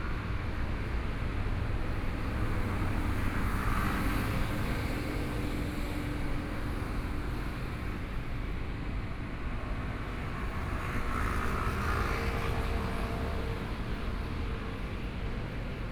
Taipei EXPO Park, Zhongshan District - Walking through the Park
Walking through the Park, Helicopter flight traveling through, Traffic Sound, Binaural recordings, Zoom H4n + Soundman OKM II
20 January 2014, ~10:00